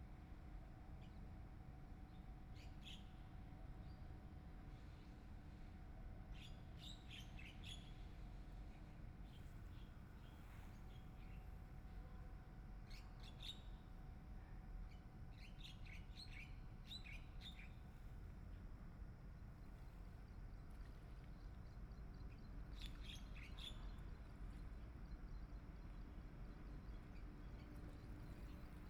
花蓮市民生里, Taiwan - Sitting by the river
Sitting by the river, Environmental sounds, Birdsong
Binaural recordings
Zoom H4n+ Soundman OKM II
Hualian City, Hualien County, Taiwan, 24 February 2014